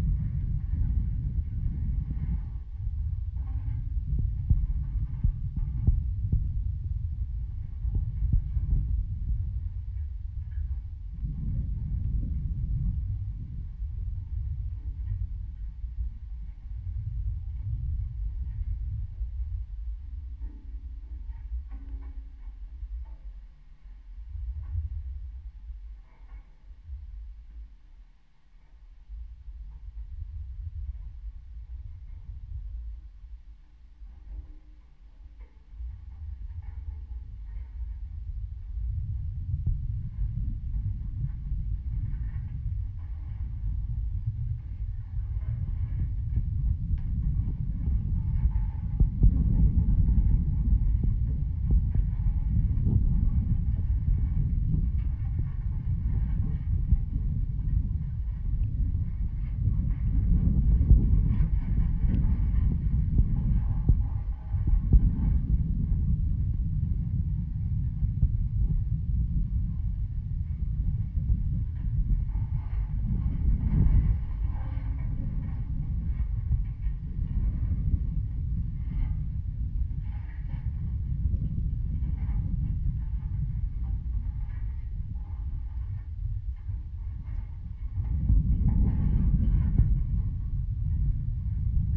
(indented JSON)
{
  "title": "metallic bridge railings, Lithuania",
  "date": "2016-08-19 12:10:00",
  "description": "metallic railings of the bridge recorded with contact microphones",
  "latitude": "55.61",
  "longitude": "25.48",
  "altitude": "87",
  "timezone": "Europe/Vilnius"
}